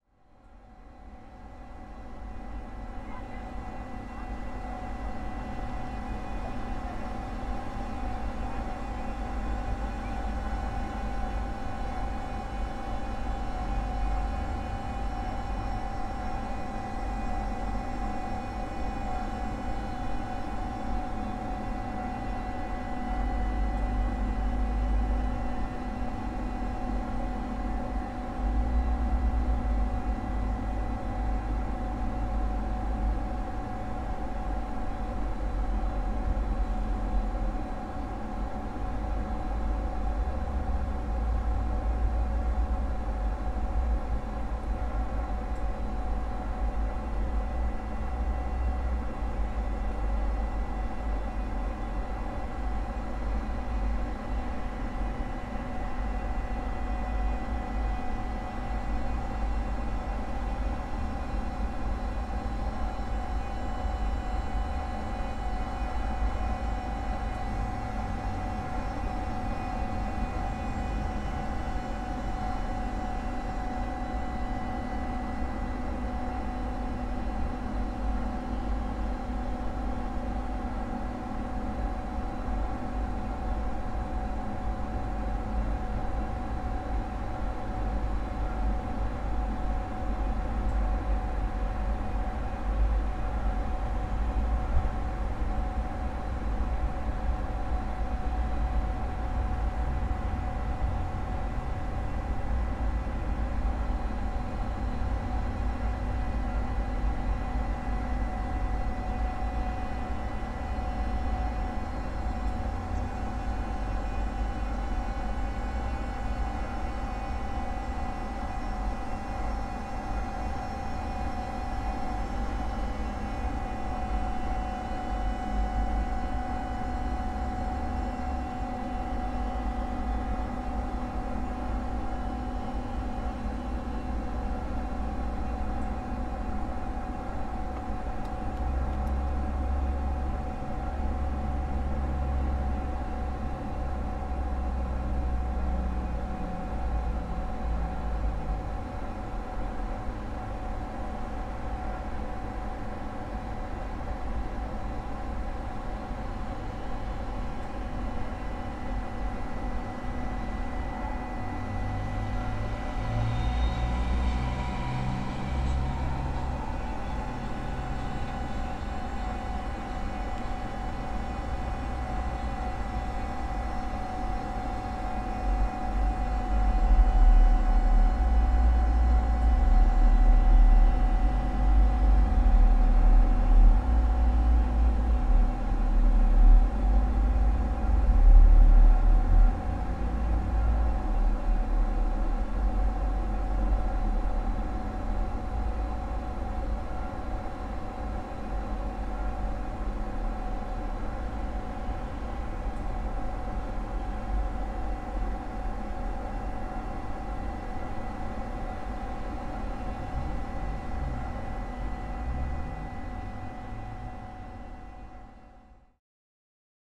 Utena, Lithuania, air conditioner
loud air conditioner on a roof of shopping center